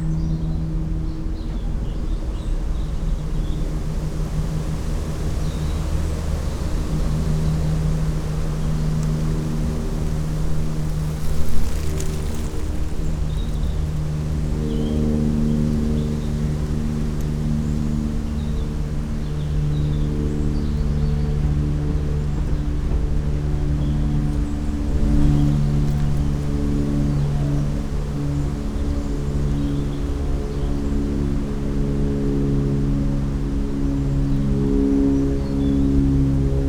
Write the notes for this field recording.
It is windy in the large walled garden next to the church. To the right a mother sits talking on the phone. Her baby cries. She leaves passing the mics. A plane flies over. Noon comes and the bells sound.